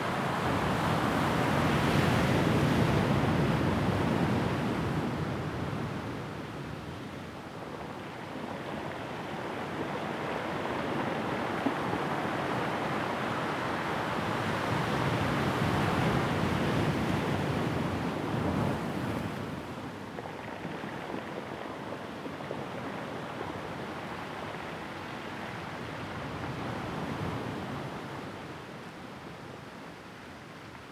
Madeira, Coast below ER101 /Camino dos Poios, Portugal - waves on pebble-beach2
Recorded with a Sound Devices 702 field recorder and a modified Crown - SASS setup incorporating two Sennheiser mkh 20 microphones.